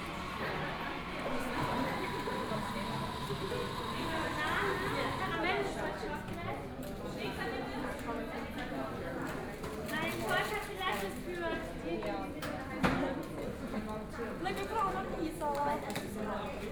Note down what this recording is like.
Inside the coffee shop, Starbucks